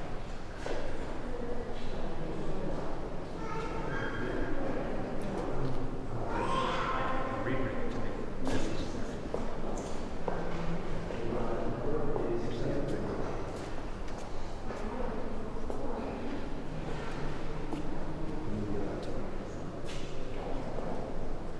Sé e São Pedro, Evora, Portugal - Sé de Évora
Inside Sé de Évora (church), footsteps, people talking, resonant space, stereo, AKG MS setup. Canford preamp, microtrack 2496, June 2006
16 April 2007, ~16:00